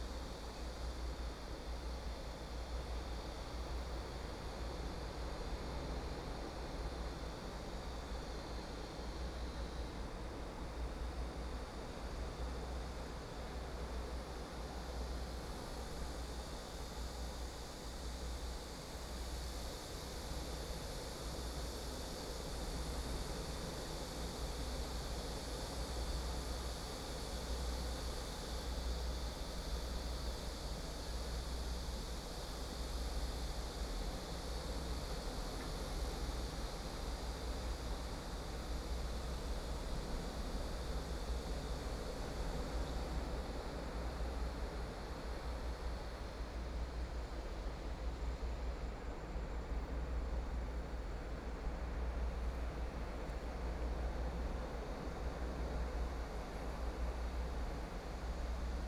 壯圍鄉過嶺村, Yilan County - Sound wave
Sound wave, Windbreaks, Birdsong sound, Small village
Sony PCM D50+ Soundman OKM II